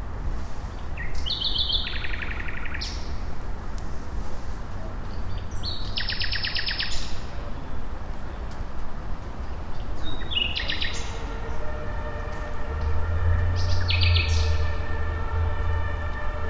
handheld Zoom H1, 10pm in april 2014, next to u-bahn-station heinrich-heine-straße, warm weather with light drizzle